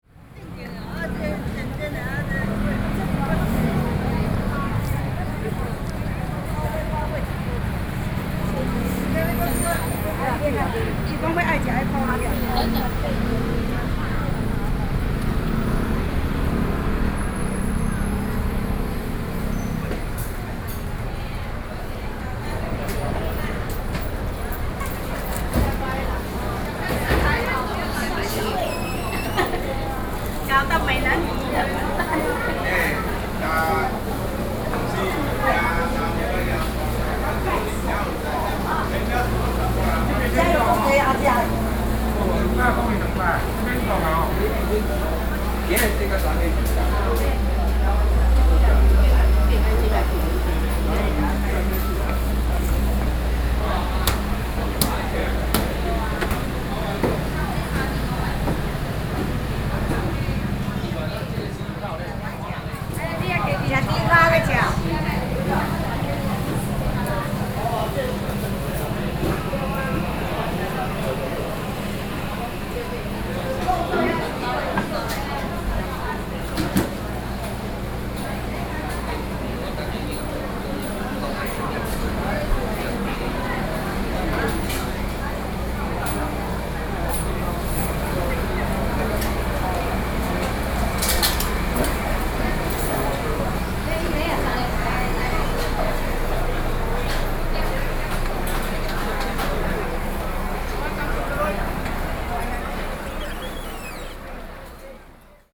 金山區大同里, New Taipei City - Walking through the traditional market
Walking through the traditional market
Sony PCM D50+ Soundman OKM II